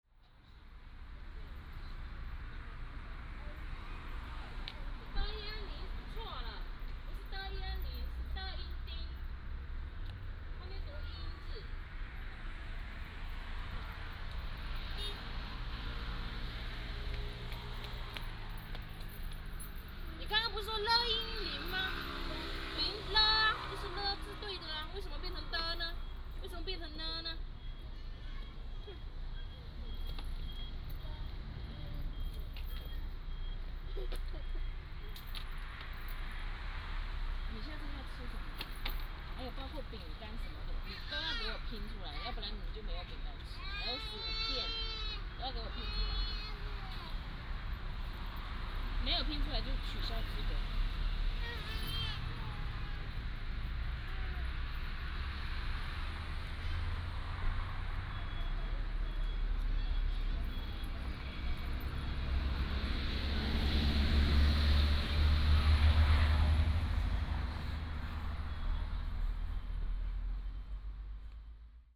乳山遊客中心, Kinmen County - Mother and child
In the next port, Mother and child